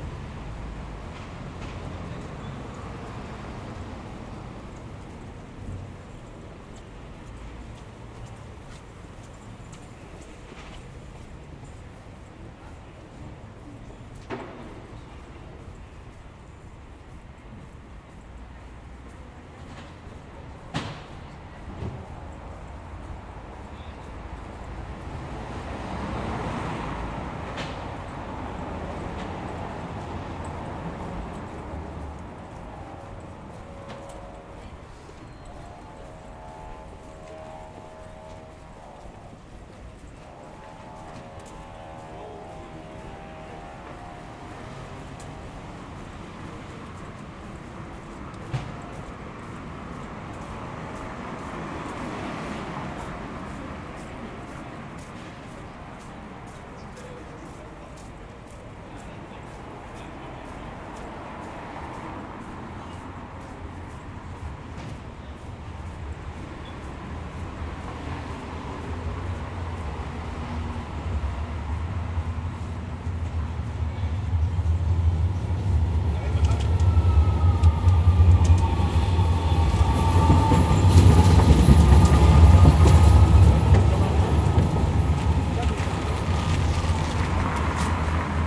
Heemraadsplein, Rotterdam, Netherlands - Walk over Nieuwe Binnenweg
Walk over Nieuwe Binnenweg from s Gravendijkwal to Heemraadsplein. It is possible to listen to some of the regular activities taking place in this important street of the city.